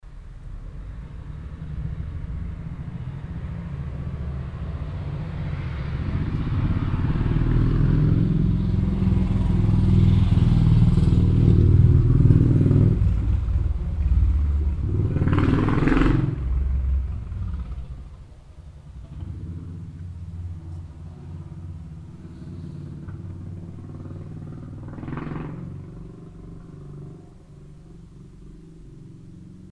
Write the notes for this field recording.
A motorbike driving through the village, on a sunday morning. Hoscheid, Motorrad, Ein Motorrad fährt durch das Dorf an einem Sonntagmorgen. Hoscheid, motocycles, Une moto roulant à travers le village un dimanche matin.